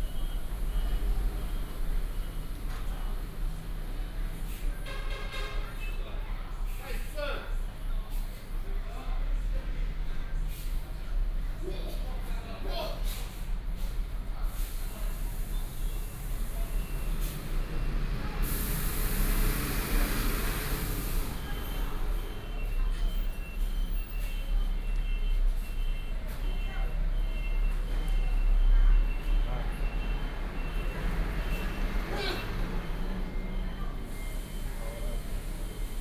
Zhonghe Rd., Zhongli Dist. - At the bus stop
At the bus stop, Station hall